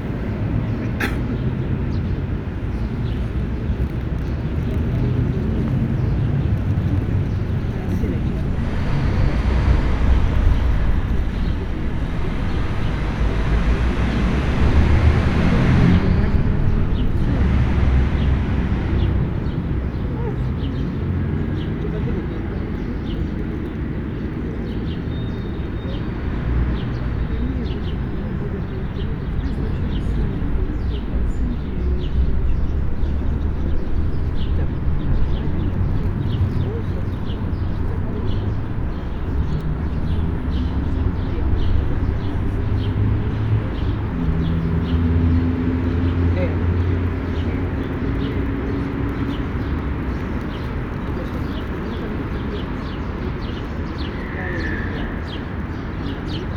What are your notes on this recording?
Summer day at noon at Ploschad Lenina, recorded with Olympus LS-14, Stereo Central Mic off